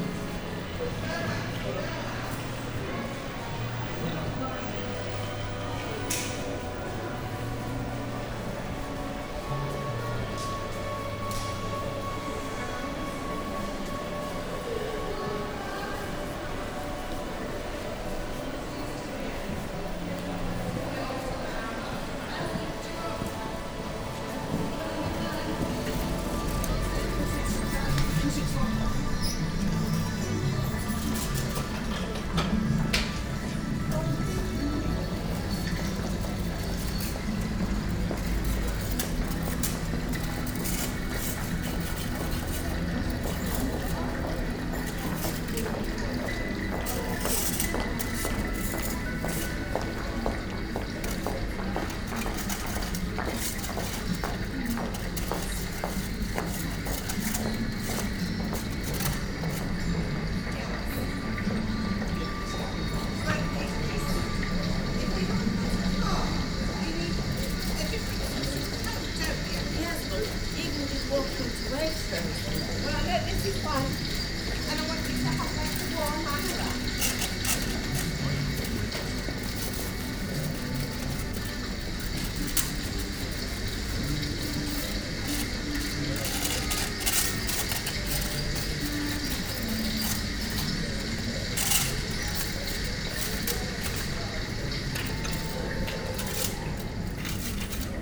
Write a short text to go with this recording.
This recording is of the "dynamic sound" installation commissioned for the 10th Anniversary of the Oracle shopping centre in Reading, on the place where the 11th Century St Giles' Watermill once stood. As I walk northwards from inside the shopping centre and stand on the bridge over the brook where the sound installation is sited, synth pads, acoustic guitar riffs and flowing water sounds emerge to form a bed underpinning the sounds of shoppers and a man removing moss from a roof opposite. For me, the question this recording poses is whether reimaginings and reinterpretations of sounds past adds to the experience and understanding of the place? Recorded using a spaced pair of Naiant X-X microphones and a Tascam DR680MKII.